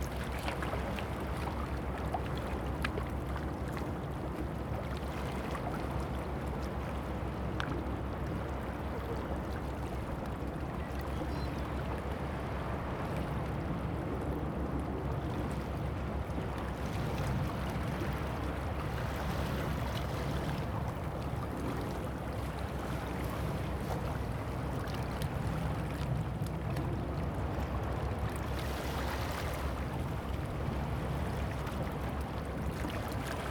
西子灣風景區, Kaohsiung County - The waves move
Sound of the waves, Beach
Zoom H2n MS+XY
22 November, ~14:00